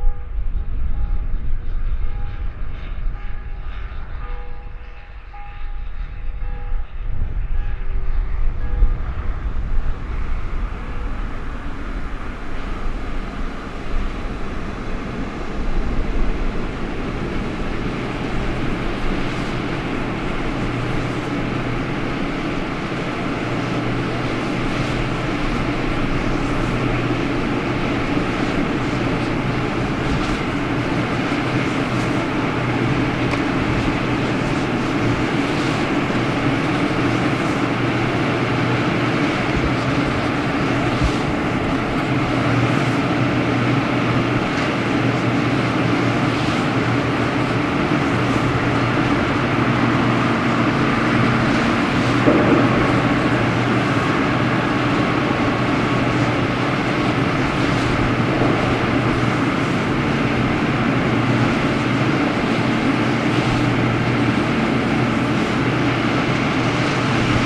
Former coal mine wind farms and hunting blind
former open-pit coal mine, wind farm, turbine, new fields, barley, hunting blind, Background Listening Post, landscaping
Germany